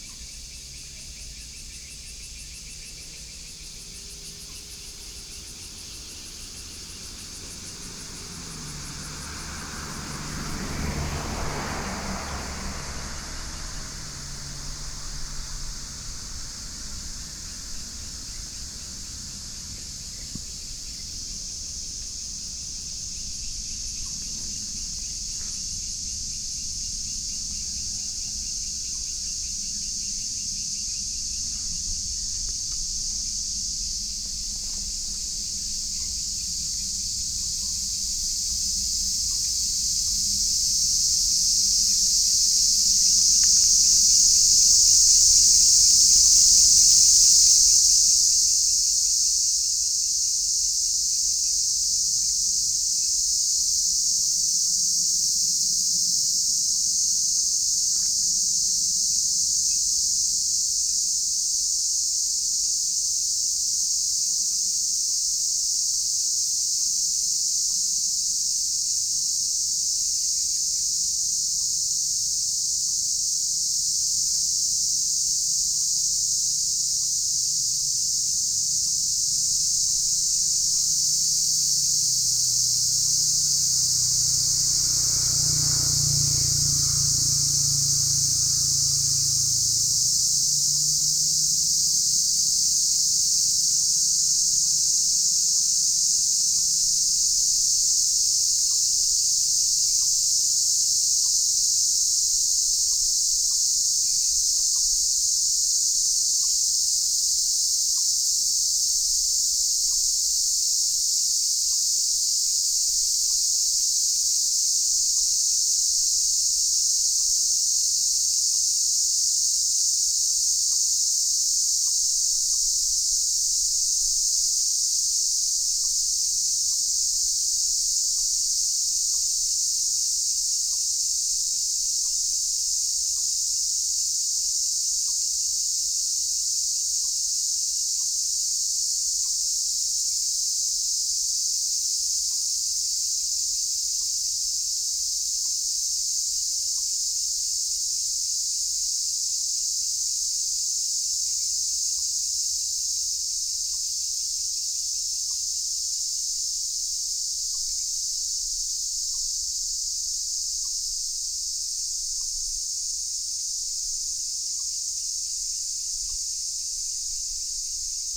Dingfu, Linkou Dist. - Cicadas cry
Cicadas cry, birds, In the woods
Sony PCM D50